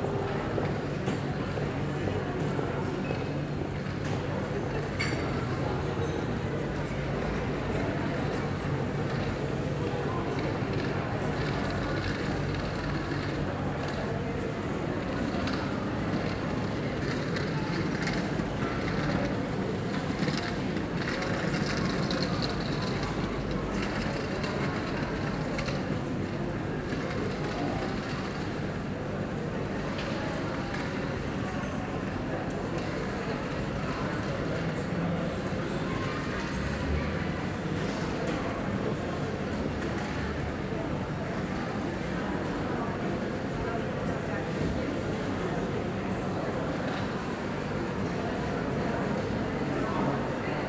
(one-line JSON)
{"title": "Galerie Platyz - galerie platyz, inner yard, afternoon", "date": "2011-06-21 16:00:00", "description": "a month later", "latitude": "50.08", "longitude": "14.42", "altitude": "206", "timezone": "Europe/Prague"}